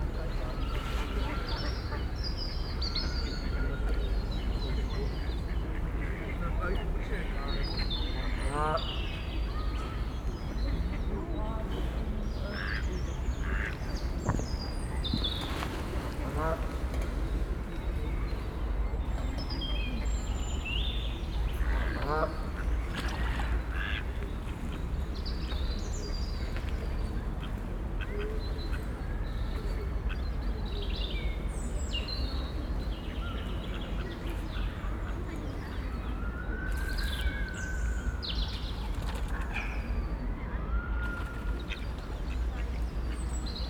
Borbeck - Mitte, Essen, Deutschland - essen, schloß borbeck, lake with ducks
Im Schloßpark Borbeck an einem Ententeich.
In the Park of Schloß Borbeck at a lake with ducks.
Projekt - Stadtklang//: Hörorte - topographic field recordings and social ambiences
Essen, Germany, 18 April